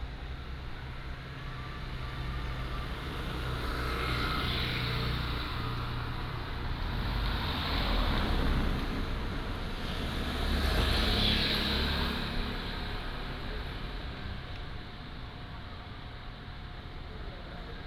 和美國小, Hemei Township, Changhua County - Small street
Small street, Traffic sound, Vendors
Hemei Township, Changhua County, Taiwan, 15 February 2017, 09:18